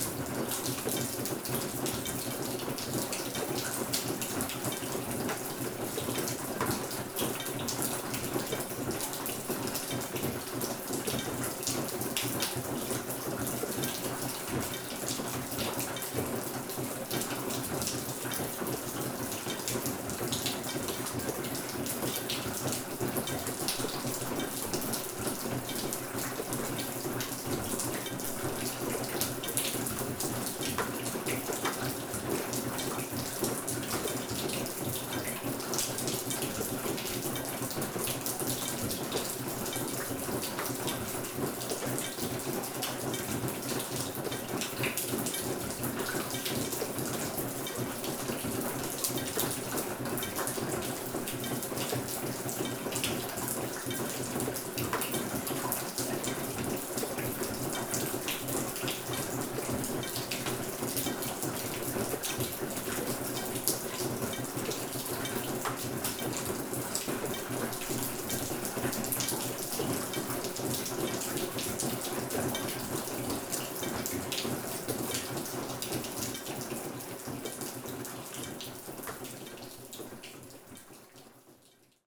Largentière, France - Mining rain
In an underground silver mine, a tunel ambiance with mining rain.